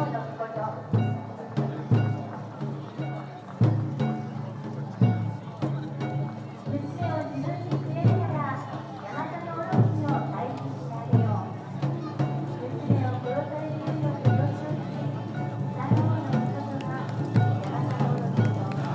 Festival floats are raced though crowds of spectators.
福岡県, 日本, 1 August